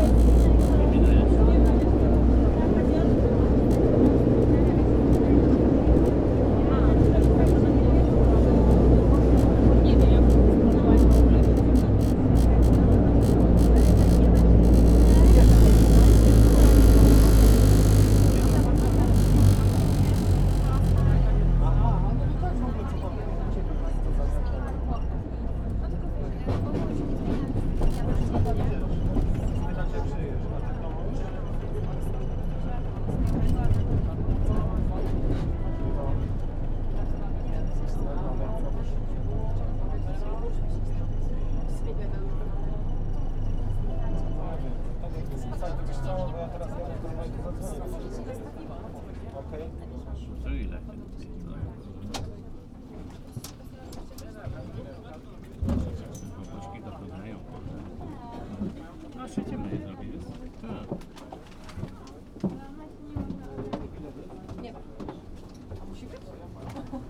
Poznan, Winogrady district, PST route - tram line 16
traveling on the fast tram route towards Piatkowo district (big residential district in north of Poznan). the tram car is an old model from the 80s. most of its parts rattle, vibrate, grind and whine during the ride. tram is full of passengers. conversations, phone calls, sighs due to crowd.